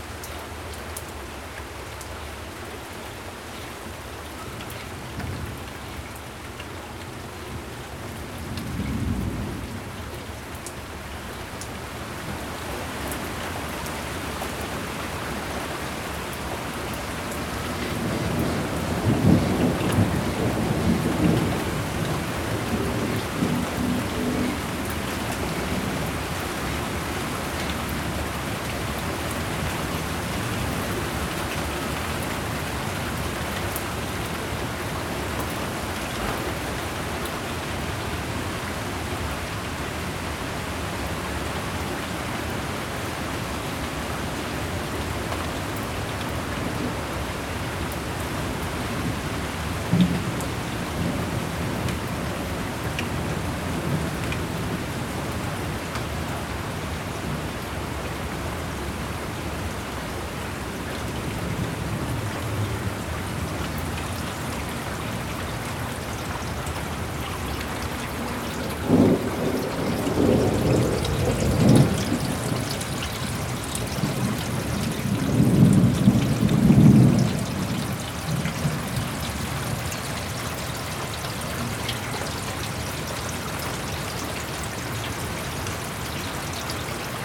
Prospect Heights, IL, USA - Rolling thunderstorms over the northern prairies
Another rainstorm during one of the wettest springs on record in the Midwest. Flooding imminent near major rivers.
Cook County, Illinois, United States of America, May 2013